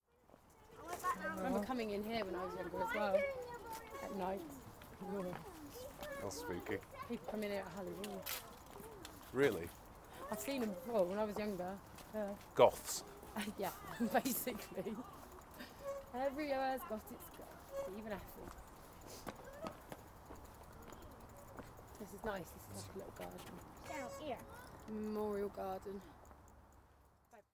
Efford Walk Two: Graveyard by night - Graveyard by night